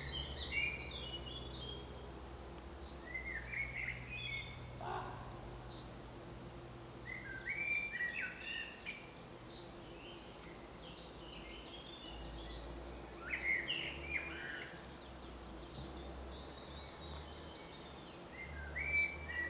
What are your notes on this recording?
Birds singing on a forest road between the villages of Horní Libchava and Slunečná.